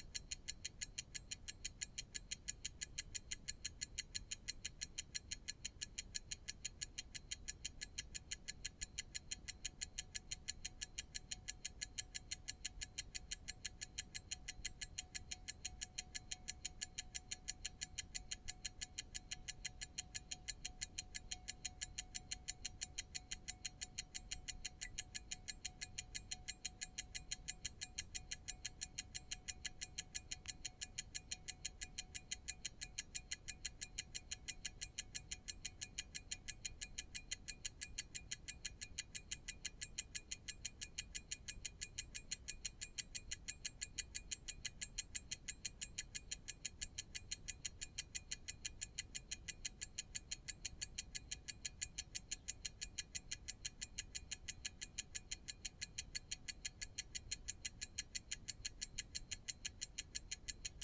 {"title": "Luttons, UK - a ticking pocket watch ...", "date": "2020-02-26 09:45:00", "description": "a ticking pocket watch ... a wind-up skeleton watch ... contact mics to a LS 14 ...", "latitude": "54.12", "longitude": "-0.54", "altitude": "76", "timezone": "Europe/London"}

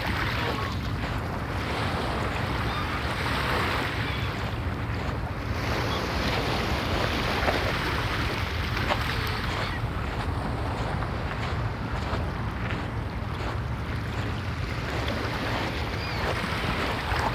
{"title": "Rosebank, Staten Island", "date": "2012-01-12 14:20:00", "description": "waves on stony beach, footsteps in shingle", "latitude": "40.62", "longitude": "-74.06", "altitude": "2", "timezone": "America/New_York"}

